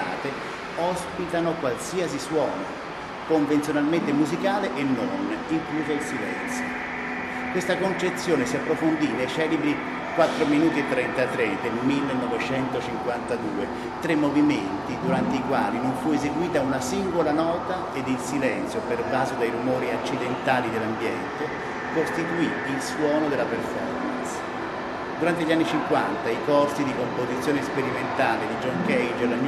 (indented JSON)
{
  "title": "MAMbo, Take The Cage Train, Carlo Infante ricorda l'edizione del 1978. Bologna 31 Maggio 2008",
  "latitude": "44.50",
  "longitude": "11.34",
  "altitude": "51",
  "timezone": "GMT+1"
}